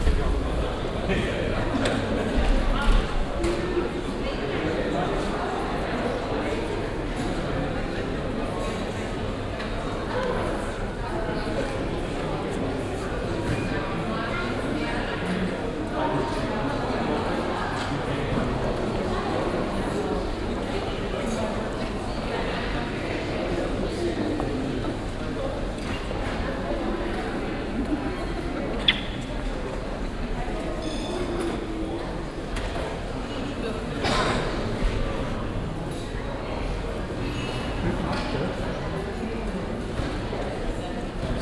cologne, rheinauhalbinsel, schokoladenmuseum, foyer
sonntäglicher ansturm auf das schokoladenmuseum, morgens - kassenpiepsen und eine durchsage
soundmap nrw - social ambiences - city scapes - topographic field recordings